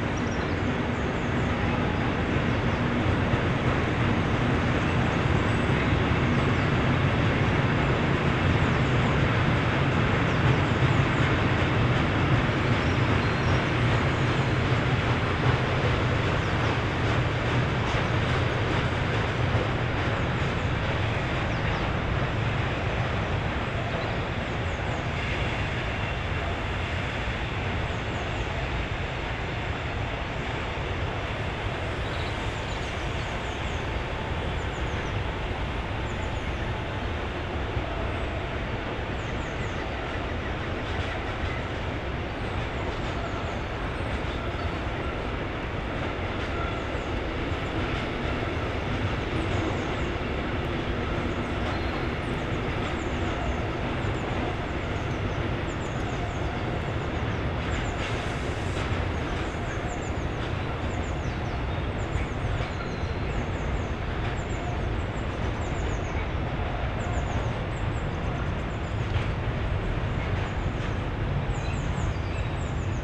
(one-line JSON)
{"title": "Volksgartenpark, Köln - evening ambience", "date": "2013-04-25 19:40:00", "description": "the sound of freight trains is audible day and night. in spring and summer time, people hang out here for fun and recreation.\n(SD702, Audio Technica BP4025)", "latitude": "50.92", "longitude": "6.95", "altitude": "54", "timezone": "Europe/Berlin"}